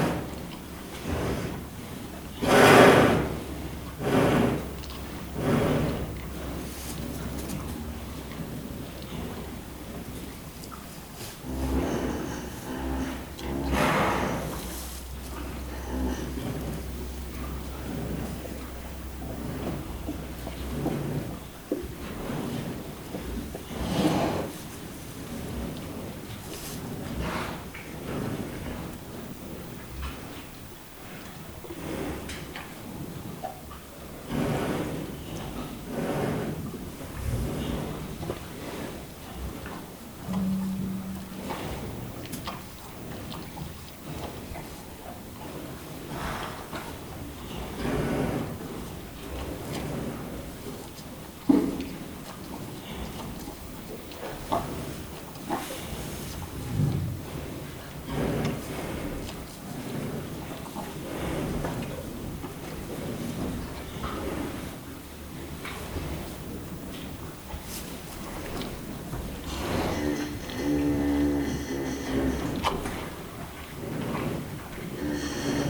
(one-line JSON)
{"title": "What do cows dream of? (Mühlviertel, Austria) - What do cows dream of? (schuettelgrat)", "date": "2004-07-18 00:20:00", "description": "Schlafende Kühe im Stall; Night on a farm.", "latitude": "48.57", "longitude": "14.55", "altitude": "766", "timezone": "Europe/Vienna"}